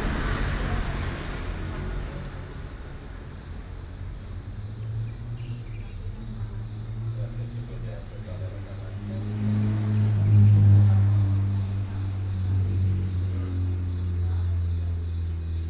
Sanzhi, New Taipei City - Traffic noise
桃園縣 (Taoyuan County), 中華民國